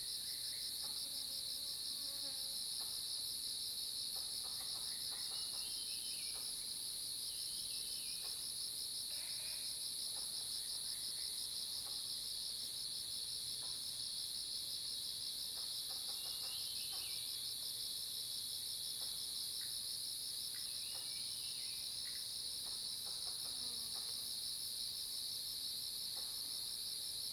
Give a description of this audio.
Insect sounds, Cicada sounds, Bird sounds, Zoom H2n MS+XY